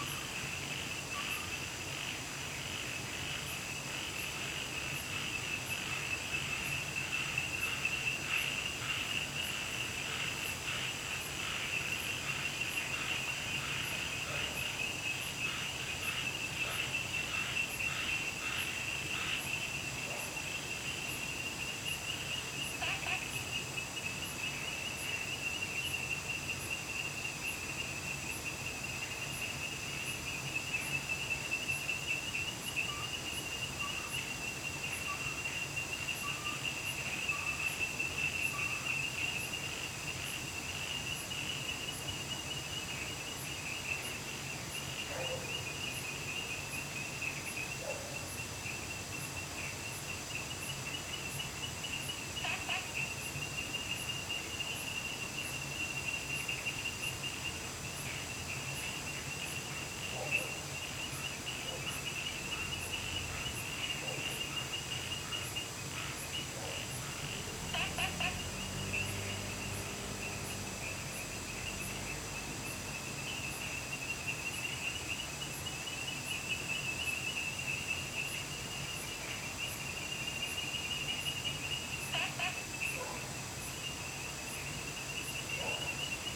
茅埔坑溼地, 南投縣埔里鎮桃米里 - Frogs chirping
Frogs chirping, In Wetland Park
Zoom H2n MS+XY
10 August 2015, 22:56